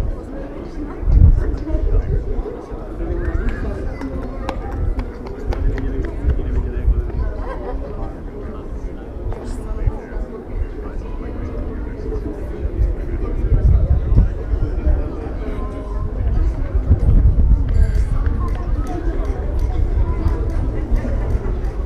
Recorded as part of the graduation work on sound perception.